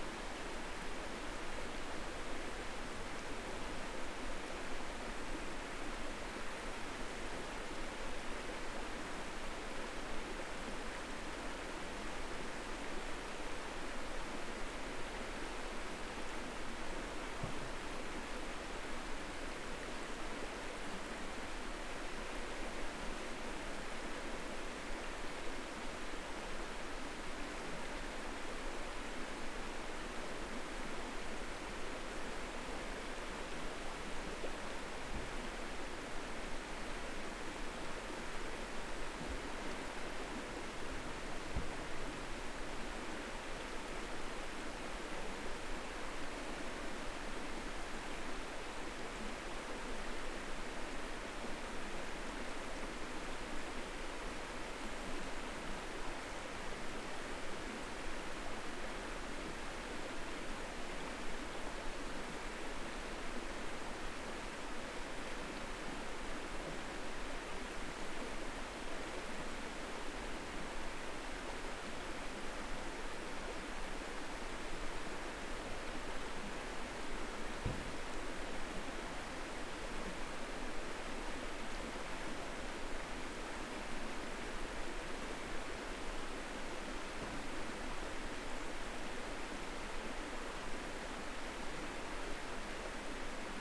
Sounds of the Gulpha Gorge Campground inside Hot Springs National Park. Gulpha Creek behind the campsite is heard as well as some road traffic, campground noises, and some sirens.
Recorded with a Zoom H5